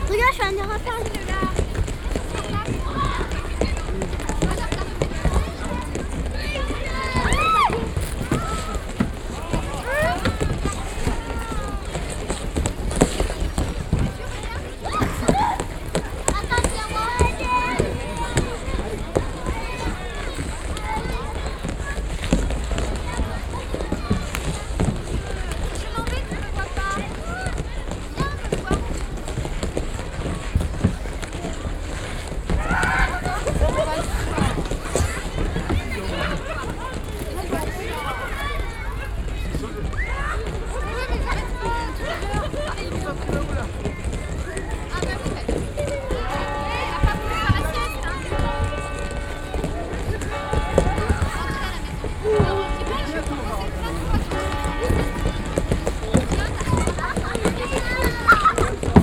France, Auvergne, Yzeure, skating rink, Binaural recording